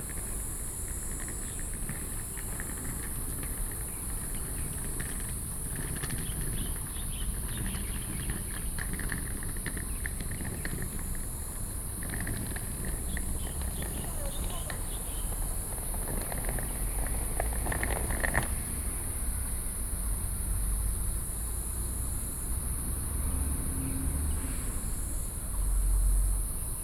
National Palace Museum, Taiwan - Plaza
in the Plaza, Sony PCM D50 + Soundman OKM II